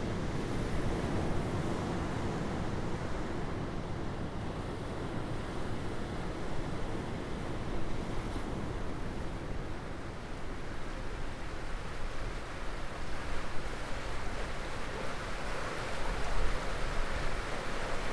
Annestown, Co. Waterford, Ireland - Annestown beach
Multiple recordings taken at various points along the beach. The Anne river enters the sea here; it can be heard at the start of the recording. Towards the end can be heard the sound of the waves sloshing beneath a concrete grille at the western end of the beach.